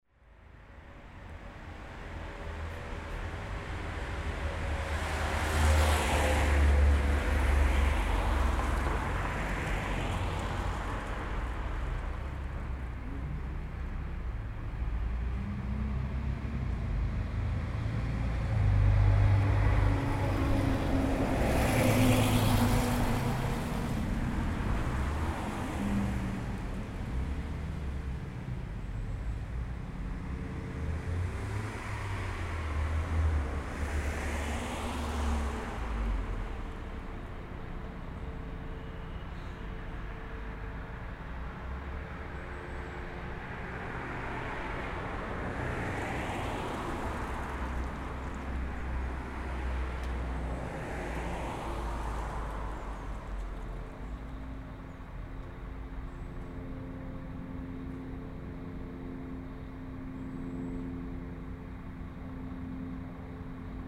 Ehinger Tor - Ehinger Tor (bus station)
Bus station in the heart of ulm. there are a few people and traffik noise. Busses and suburban trains arrive and depart.